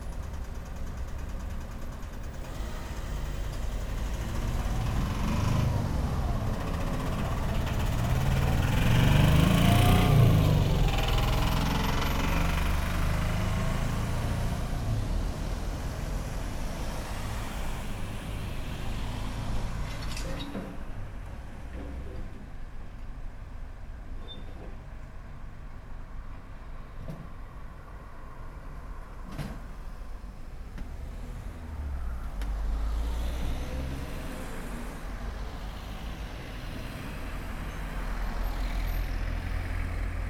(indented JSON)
{
  "title": "Querceta LU, Italy - Building of the new bicycle lane",
  "date": "2017-03-30 09:43:00",
  "description": "Crossroad sounds and the building of the new bicycle lane connecting Querceta to Forte dei Marmi, right under my windows.\nRecorded with a Tascam DR-05",
  "latitude": "43.97",
  "longitude": "10.19",
  "altitude": "6",
  "timezone": "Europe/Rome"
}